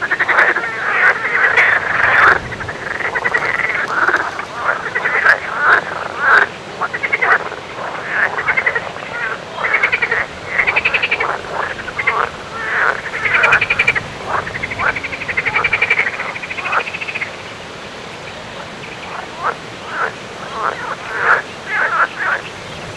Ptasi Raj, Gdańsk, Poland - Grobla żaby / frogs
Grobla żaby / frogs rec. Rafał Kołacki
7 June 2015